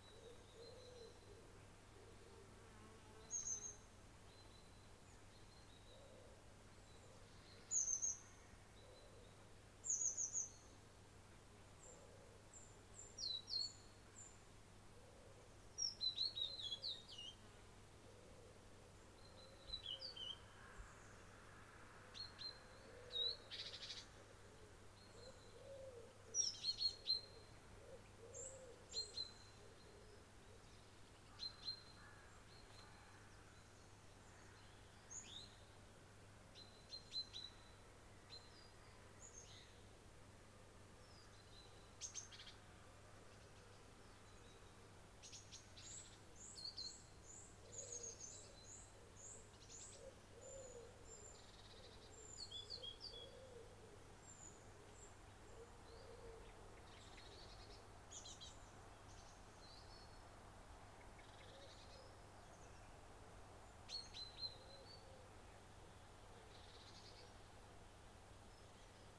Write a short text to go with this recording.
This recording was made using a Zoom H4N. The recorder was positioned on the jetty of the lake at Embercombe. Embercombe is one of the core rewilding sites in Devon Wildland, as well managing the land for nature it is a retreat centre. This recording is part of a series of recordings that will be taken across the landscape, Devon Wildland, to highlight the soundscape that wildlife experience and highlight any potential soundscape barriers that may effect connectivity for wildlife.